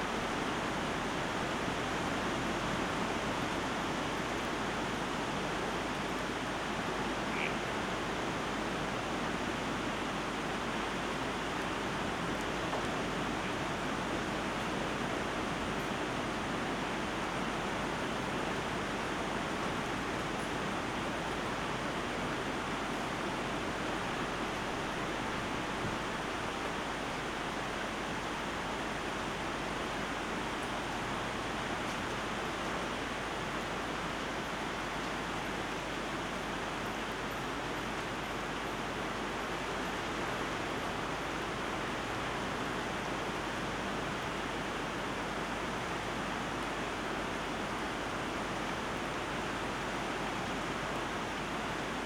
frogs by the sea. At first i was really surprised: amphibians and salt water doesn't seem like a healthy combination. But then i found out that bjust behind the beach raainwater pools were formed in the undergrowth. So now you can enjoy in audio the combination of sea and frogs